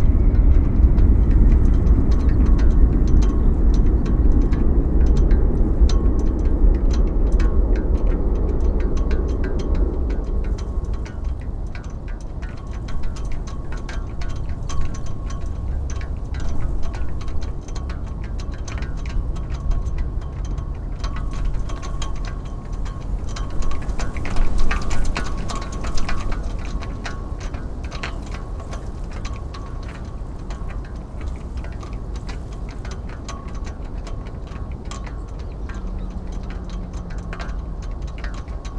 Deba, Ritto, Shiga Prefecture, Japan - Flagpoles in Wind
Ropes banging against metal flagpoles in a moderate north wind along the running track at Yasugawa Sports PArk. The Shinkansen passes twice during the recording.